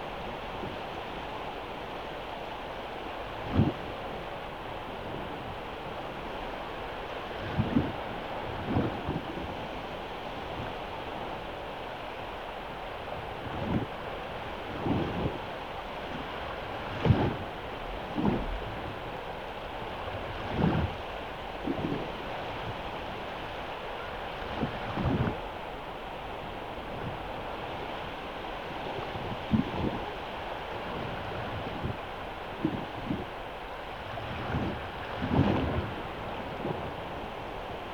Jūrmala, Latvia, on the shore
hydrophones buried in the sand on the seachore, near the water